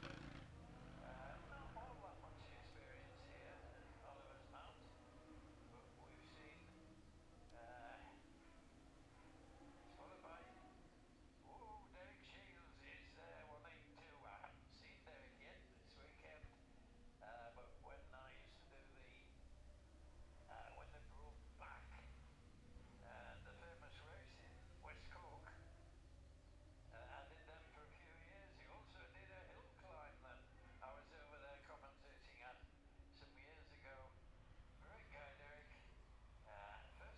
Jacksons Ln, Scarborough, UK - gold cup 2022 ... classic s'bikes ... practice ...
the steve henshaw gold cup 2022 ... classic superbikes practice ... dpa 4060s on t-bar on tripod to zoom f6 ...